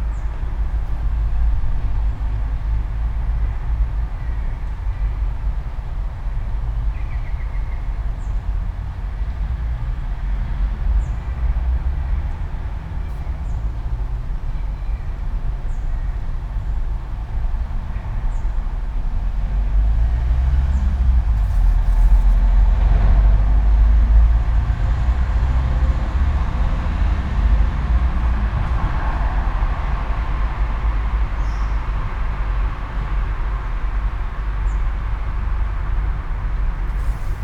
all the mornings of the ... - aug 3 2013 saturday 08:00